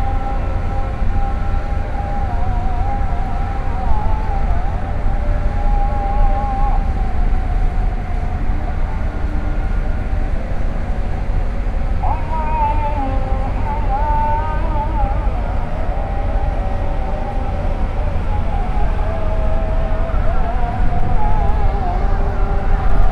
Beyoğlu, Turkey - Antrepo. Walk to roof
Climb up a metal staircase to the roof of this harbour warehouse. Sounds of mosques, seagulls, ships.
recorded binaurally - DPA mics, DAT tape.